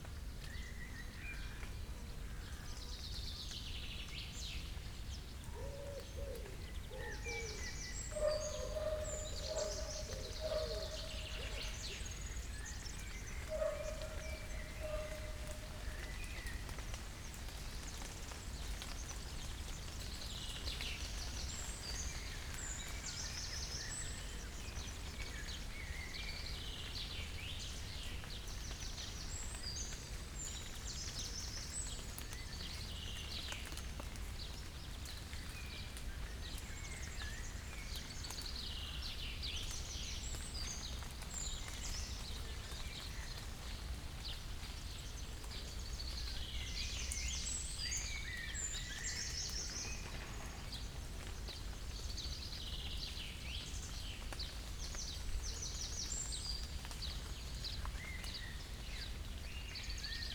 Beselich, Niedertiefenbach - Sunday morning village ambience
sunday morning village ambience, it has rained
(Sony PCM D50, DPA4060)
Beselich, Germany, 13 July 2014